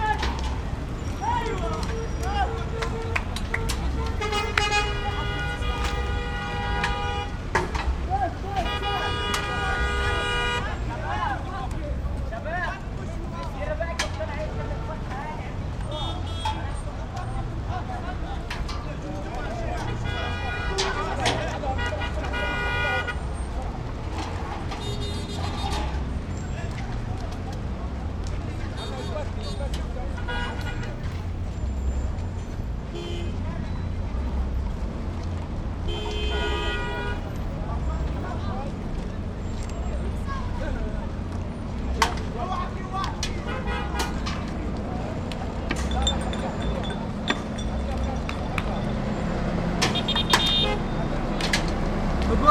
Qalandiya Checkpoint, watchtower - Palestinian kids throwing stones against watchtower
At the end of a demonstration at Qalandiya checkpoint, some Palestinian kids started throwing stones and rocks against a watchtower of the Israeli army